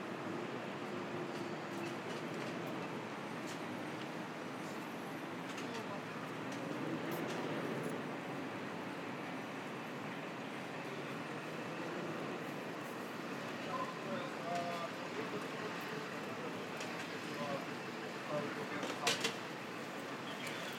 Lexington Ave, New York, NY, USA - A trash can in Lexington Ave
Sound of a wheeled trash can, traffic, sirens, and sidewalk constructions in Lexington Ave, Manhattan.
United States, March 2022